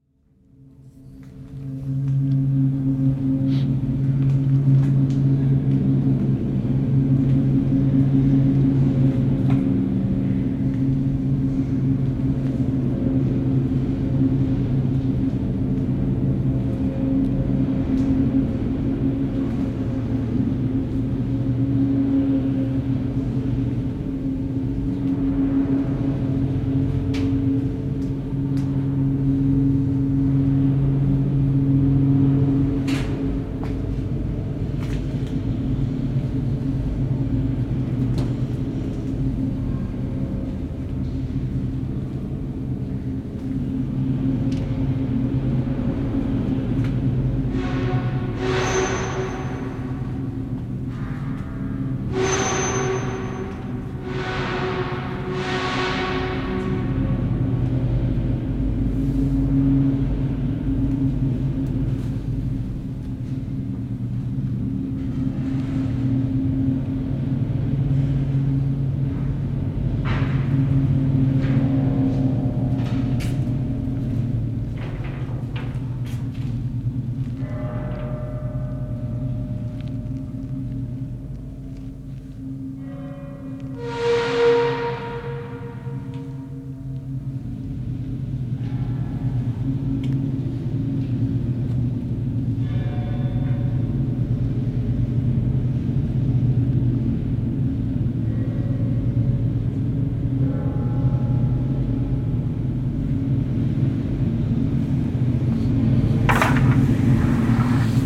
urban exploring in old Riga power station (binaural) Latvia